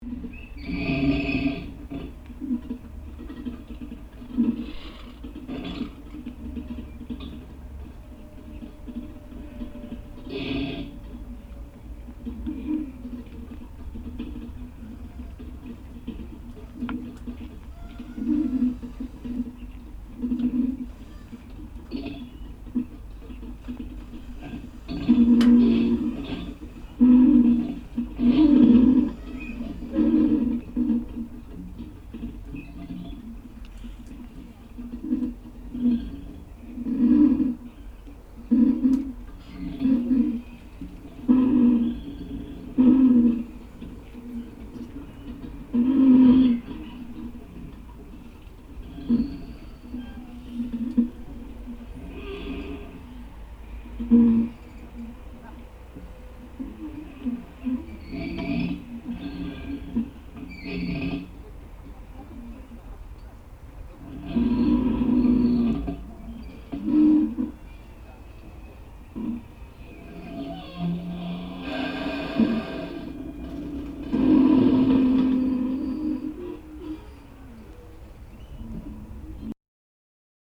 contact microphones & stereo microphone
Utrecht, The Netherlands